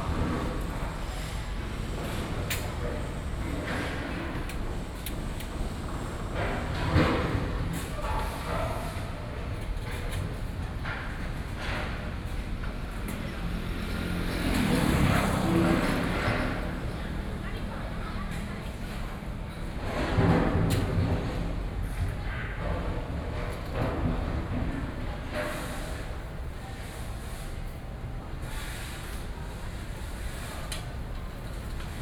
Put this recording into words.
Traffic Sound, Construction Sound, In the square outside the community, Sony PCM D50+ Soundman OKM II